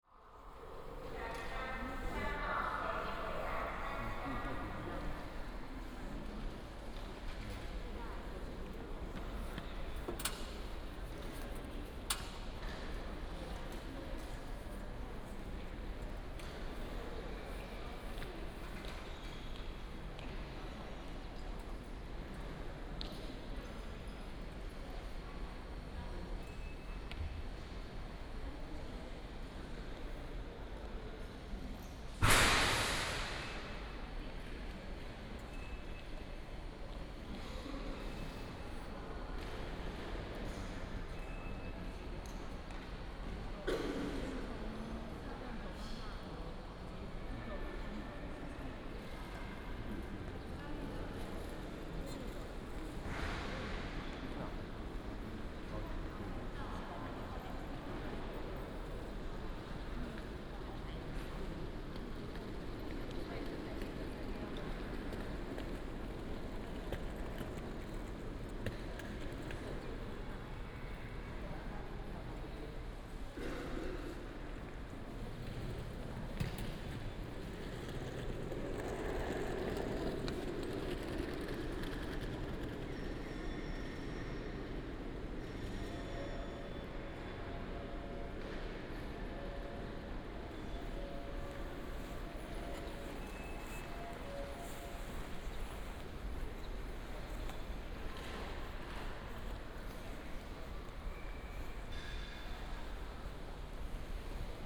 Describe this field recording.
walking in the Station, From the station hall to the platform